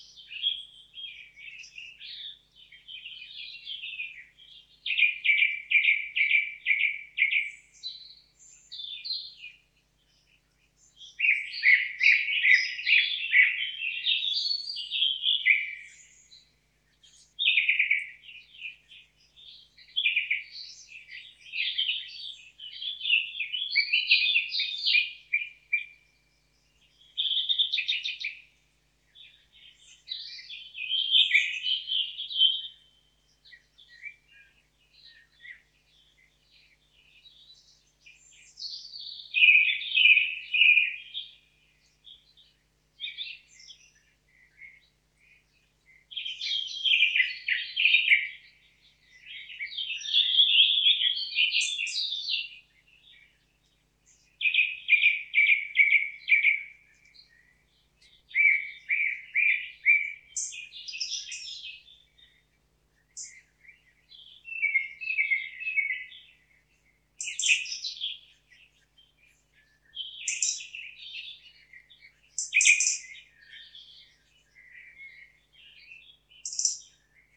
Walking through the forest, just in that time, some exciting birds started to have a hot discussion. Bird Singing with hot loud voices during the hot summer day.
ZOOM H4n PRO
Binaural Microphones
Slovenija, 23 June 2018